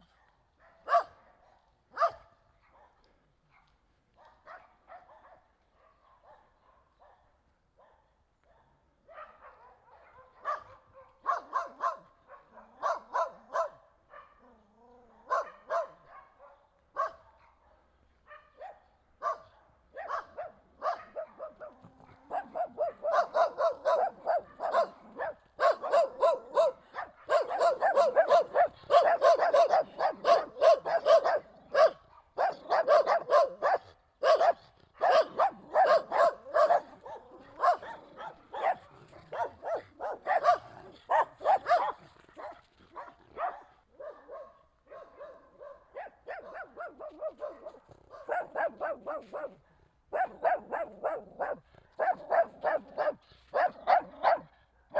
Kruhelska, Przemyśl, Poland - (68) Dogs barking on the way to The Tatars Barrow and The Zniesienie Hill
Binaural recording of dogs barking on the way to The Tatar's Barrow and The Zniesienie Hill.
recorded with Soundman OKM + Sony D100
sound posted by Katarzyna Trzeciak